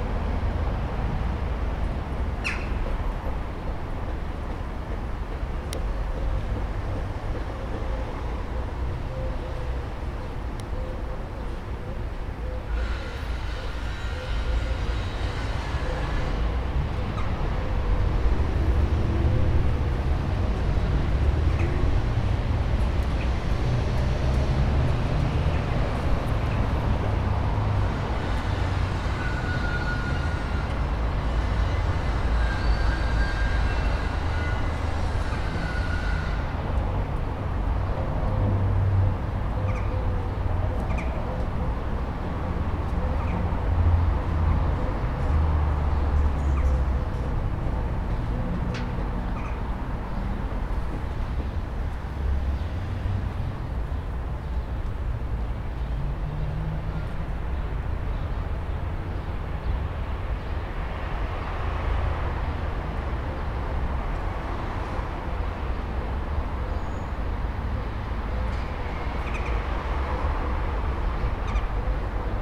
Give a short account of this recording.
Cathedral renovations after the big fire in 2017 and the city noises.